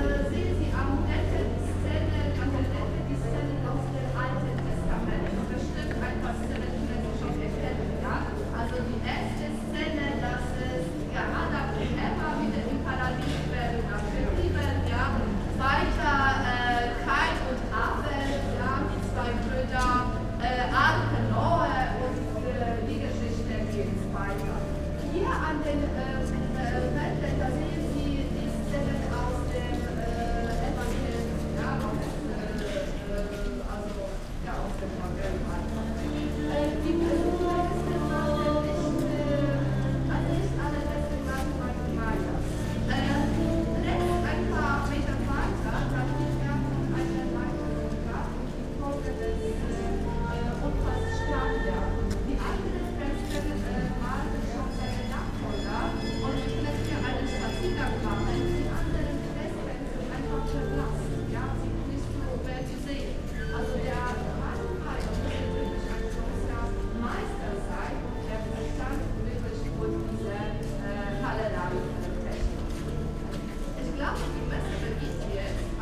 Święta Lipka, Poland, church yard
people coming out of church after the concert
12 August 2014